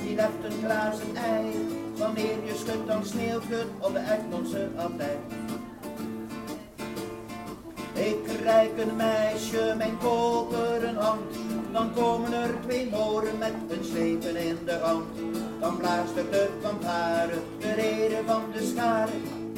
Frankrijklei, Antwerpen, Belgique - Musicien de rue - Street musician
dans les couloirs souterrains du tram
in the underground corridors of the tram
Antwerpen, Belgium